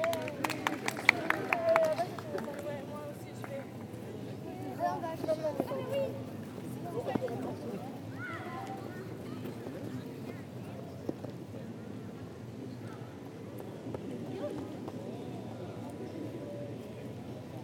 Leuven, Belgique - Girl scouts
Into a quiet park, girl scouts playing and a drone filming her.